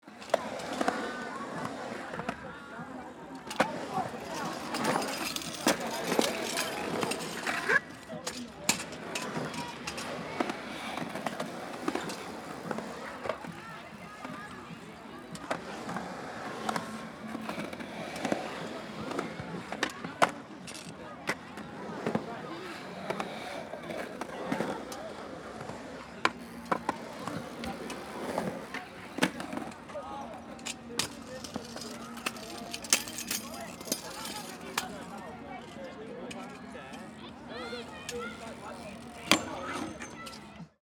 Walking Holme Skate Ramp
Skate boards, BMXers and scooters jumping over a tabletop with a halfpipe at each side.